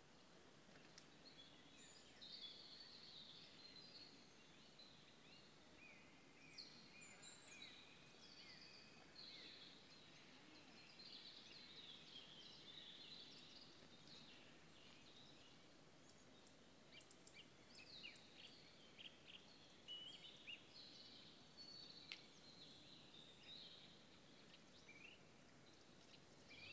A binaural foldown of an Ambisonic recording from within the safety perimeter at Afrikaburn; the burning of the art piece Pipe Dreams
Northern Cape, South Africa, 2 May 2019